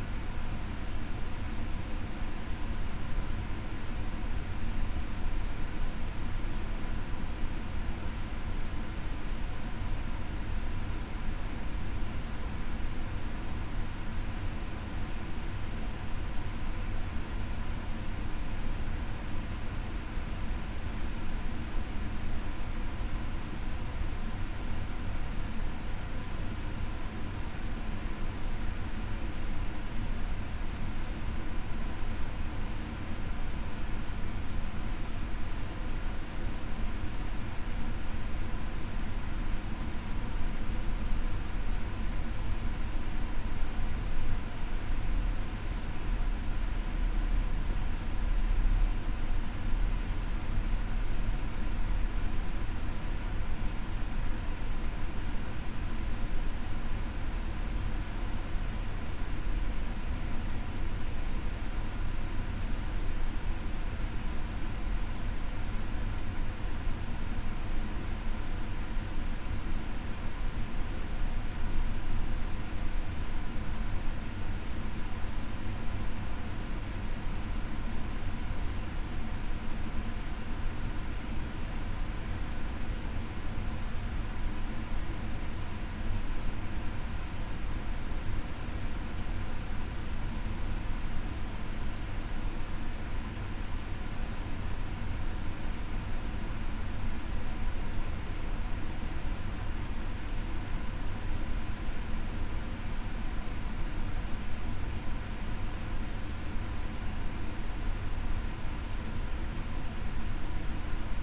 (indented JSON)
{
  "title": "Arboretum Ln, North York, ON, Canada - HVAC in the CTASC Vault",
  "date": "2019-10-21 11:43:00",
  "description": "Recorded in the storage vault of the Clara Thomas Archives and Special Collections in the basement of the Scott Library at York University. The only sound is the air ventilation system.",
  "latitude": "43.77",
  "longitude": "-79.51",
  "altitude": "208",
  "timezone": "America/Toronto"
}